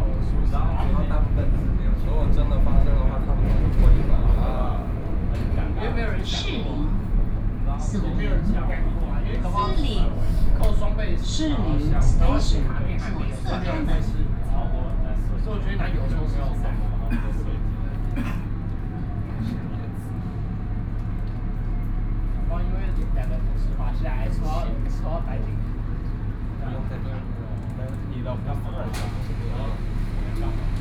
Tamsui Line (Taipei Metro), Taipei City - Tamsui Line
from Minquan West Road station to Shilin station, Sony PCM D50 + Soundman OKM II
Taipei City, Taiwan, 10 September 2013